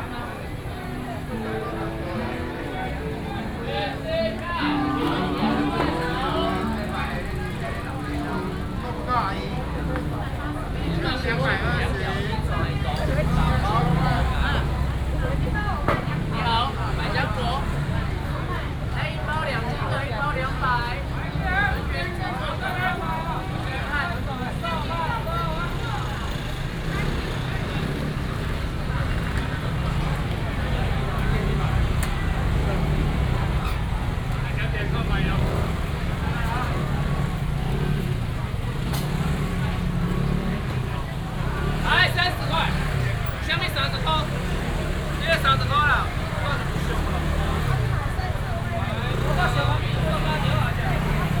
雙和市場, Wanhua Dist., Taipei City - Walking in the traditional market
Walking in the traditional market, traffic sound